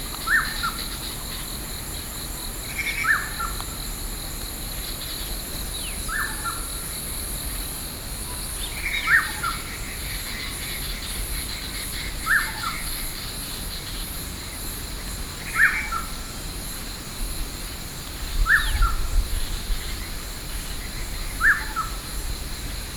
Jinshan, New Taipei City - Natural environmental sounds
Jinshan District, New Taipei City, Taiwan, 11 July 2012, 07:32